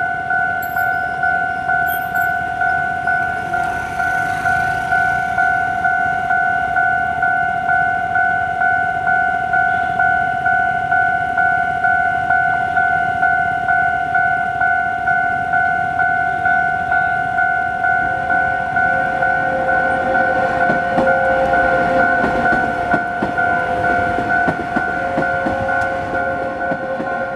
Warning sound, Level crossing, Train traveling through, Sony Hi-MD MZ-RH1, Rode NT4
高雄市 (Kaohsiung City), 中華民國, February 2012